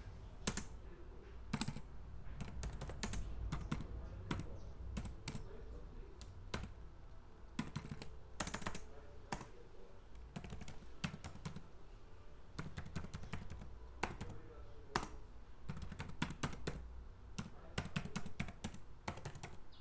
Cruz das Almas, BA, Brasil - Digitando
PCM
teclas do notebook
Bahia, Brazil, 10 March 2014